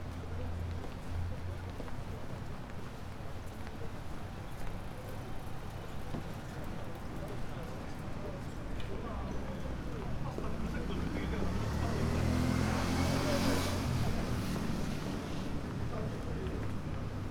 {"title": "Tokyo, Taito district - sounds of the streets on the way to hotel", "date": "2013-03-28 21:44:00", "description": "sounds of the streets in the evening. restaurants, water drops, phone conversations, push carts...", "latitude": "35.71", "longitude": "139.77", "altitude": "14", "timezone": "Asia/Tokyo"}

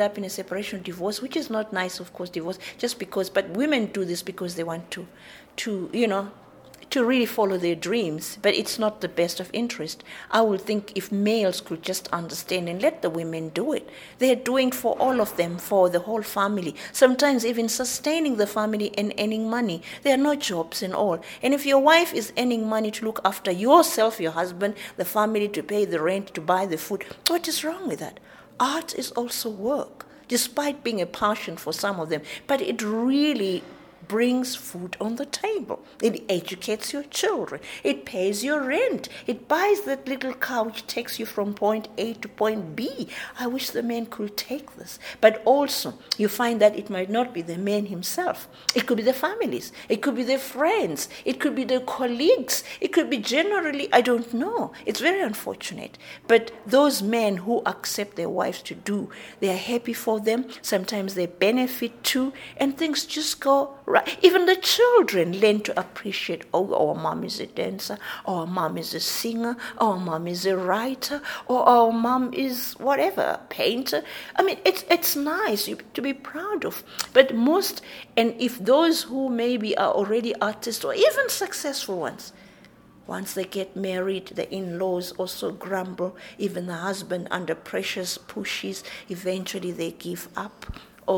{"title": "Library of National Gallery, Harare, Zimbabwe - Virginia Phiri talks women’s struggle and creativity…", "date": "2012-09-17 15:00:00", "description": "We are with the writer Virgina Phiri in the open meeting room adjacent to the library of the National Gallery. It’s a bit “echo-y” in here; but the amplified park preachers from Harare Gardens drove as inside. What you are listening to are the final 10 minutes of a long conversation around Virginia’s life as a woman writer in Zimbabwe. Virginia talks about the struggles that women have to go through and encourages her sistaz in the arts to stand strong in their creative production; “We have always done that!.... traditionally women were allowed to do it…!”.\nFind the complete interview with Virginia Phiri here:", "latitude": "-17.82", "longitude": "31.05", "altitude": "1485", "timezone": "Africa/Harare"}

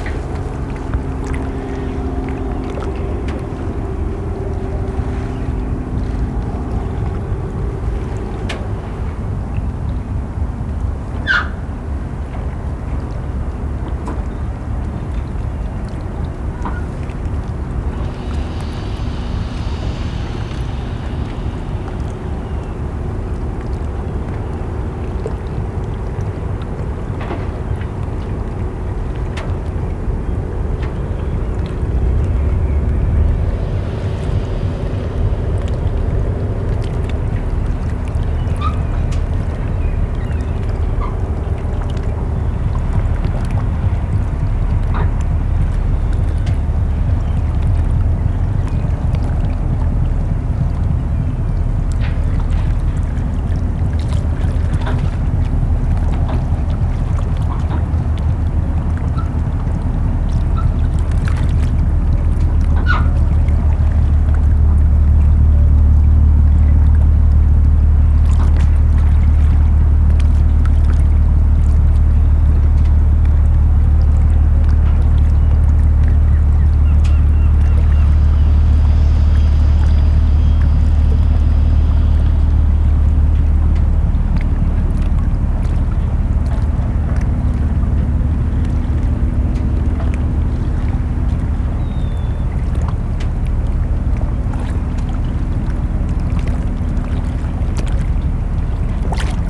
{"title": "Gamle Oslo, Norway - On the pier, close to the opera.", "date": "2011-08-30 13:00:00", "description": "Walking on the pier close to the Oslo opera recording. The ferry going to Denmark on the other side of the harbour. Recording in a water dripping cave underneath the pier.\nRecorded with a Zoom H4n.", "latitude": "59.90", "longitude": "10.75", "altitude": "3", "timezone": "Europe/Oslo"}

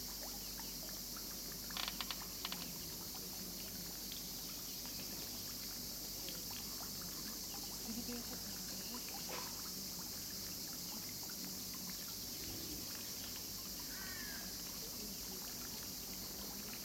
{"title": "Troulos, Greece - poolside ambience", "date": "2022-06-24 07:45:00", "description": "A quiet morning by the pool before too many people are awake. The cicadas are chirping and the hotel puppy has a go at one of the cats. Bliss in the early warm sunshine.", "latitude": "39.14", "longitude": "23.43", "altitude": "17", "timezone": "Europe/Athens"}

